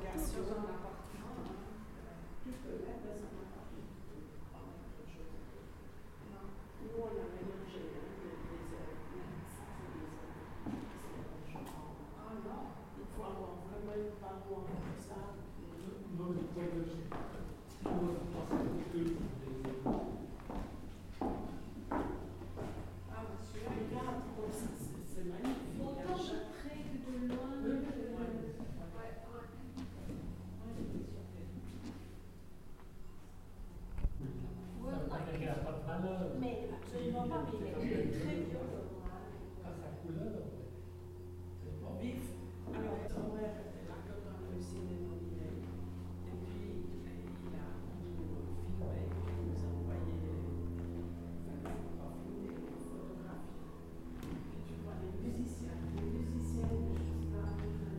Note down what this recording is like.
Espace culturel Assens, Ausstellungen zeitgenössischer Kunst, Architektur